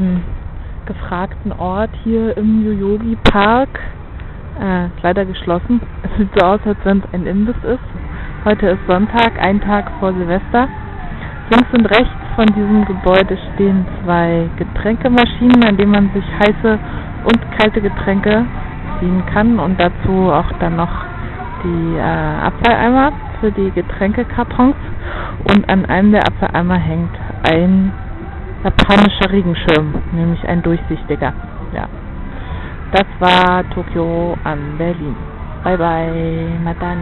answer to hendrik / tokyo to berlin